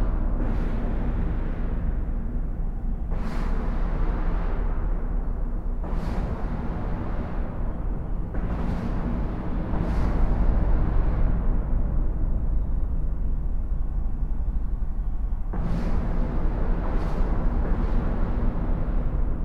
Court-St.-Étienne, Belgique - Inside the bridge
Inside a concrete bridge, the sound of the tires scrubing the road. As it's complicate to understand, just know a concrete bridge is empty, and I'm just below the road. In fact, it's here the real sound of the life of a road, from inside.
Belgium, 8 April 2016